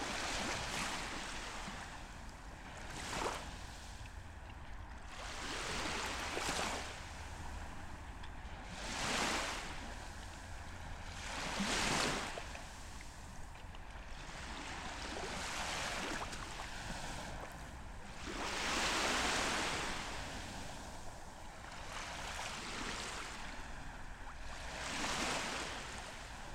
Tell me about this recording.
recording morning sea just right at the sea:)